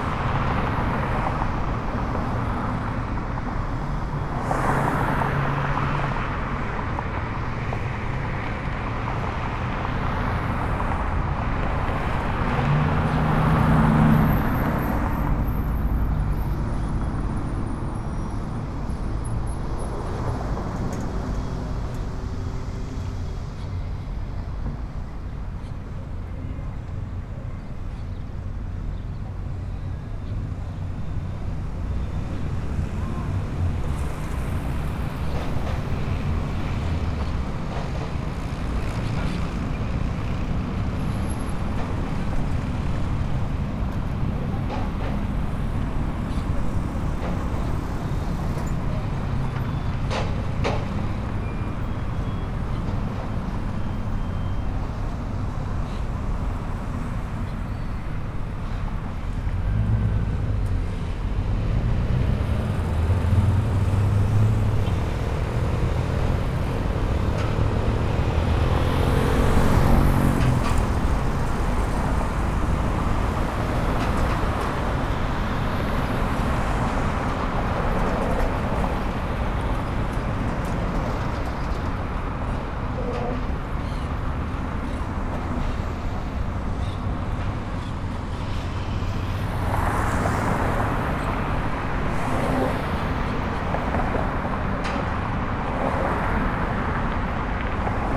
Blvd. López Mateos Pte., Obregon, León, Gto., Mexico - Desde el puente del Parque Hidalgo, arriba del bulevar.
From the Parque Hidalgo bridge, above the boulevard.
Traffic coming and going on the boulevard and some people going over the bridge, among them, some with skateboards.
I made this recording on november 29th, 2021, at 1:04 p.m.
I used a Tascam DR-05X with its built-in microphones and a Tascam WS-11 windshield.
Original Recording:
Type: Stereo
El tráfico que va y viene en el bulevar y algunas personas pasando por el puente, entre ellos, algunos con patinetas.
Esta grabación la hice el 29 de noviembre de 2021 a las 13:04 horas.